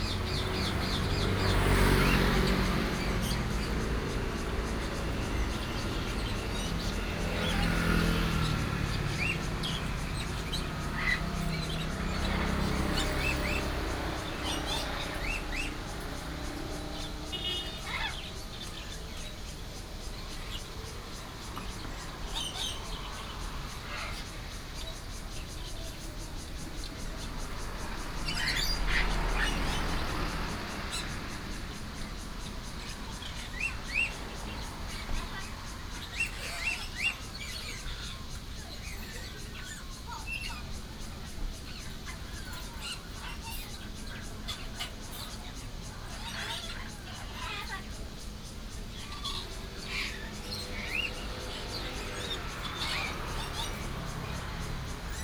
Parrot shop, In the shop selling parrots, Cicadas, Traffic sound